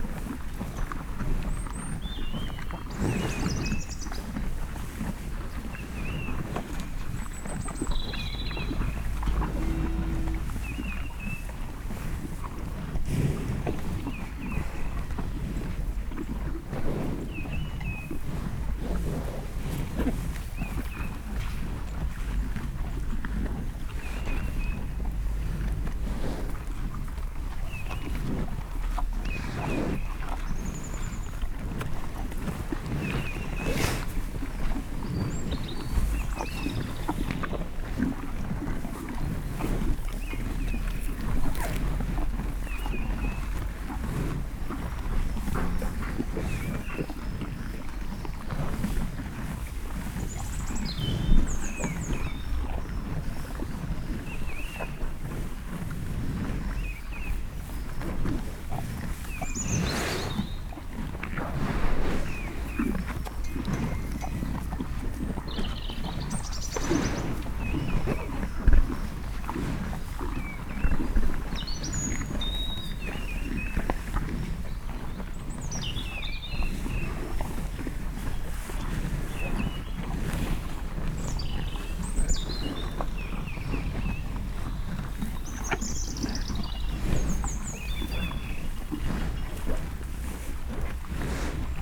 Cowshed, Bredenbury, Herefordshire, UK - Hereford Cattle Eating
About a dozen Hereford cattle continuously munch on hay bales. They are in an open sided cattle shed and I have placed the mics on top of the bales. While I stand about a yard away they stare at me fixedly all the time they are ating.
West Midlands, England, United Kingdom, 31 March 2018